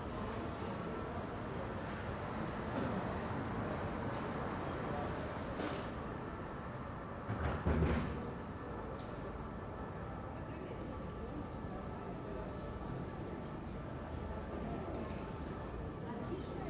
Rue Roger Verlomme, Paris, France - AMB PARIS EVENING RUE ROGER VERLOMME MS SCHOEPS MATRICED
This is a recording of a small cobbled street in the 3th Paris district during evening. I used Schoeps MS microphones (CMC5 - MK4 - MK8).
Île-de-France, France métropolitaine, France, 22 February 2022